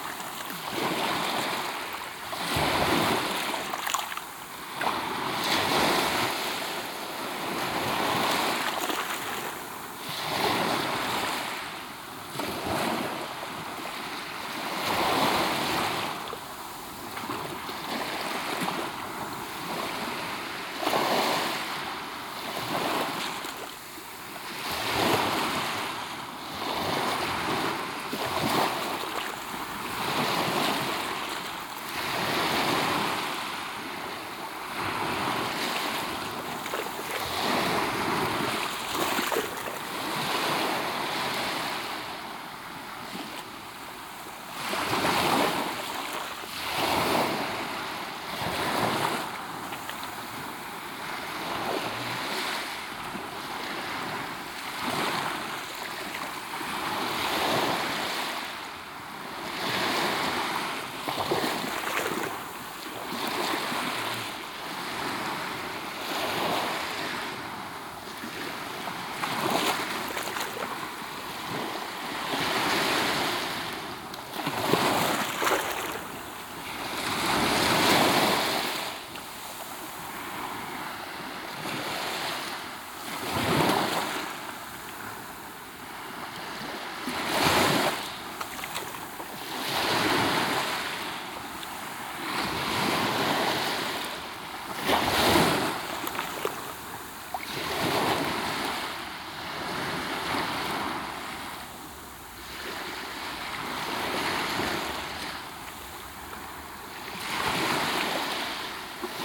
Waves at Playa Muchavista, Alicante, Hiszpania - (12) BI Waves, really close
Binaural recording of waves, while sitting in the water.
ZoomH2, Soundman OKM